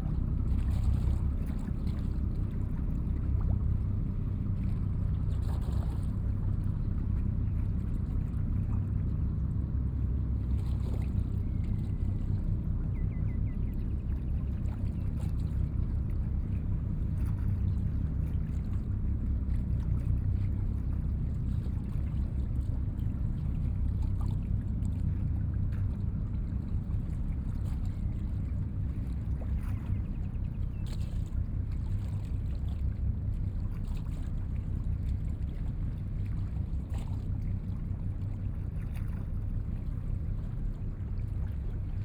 鹽埕區沙地里, Kaohsiung City - In the dock
In the dock